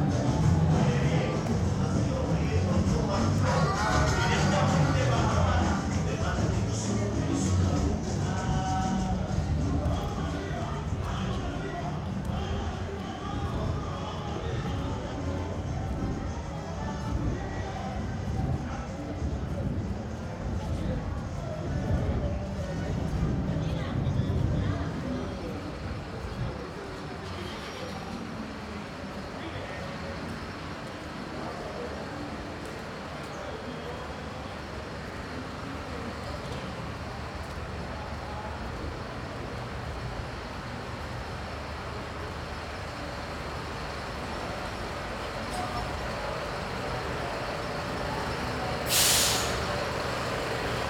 Havana, Cuba - Evening walk in La Habana Vieja
Early evening walk through Old Havana in the direction of El Capitolio.
March 2009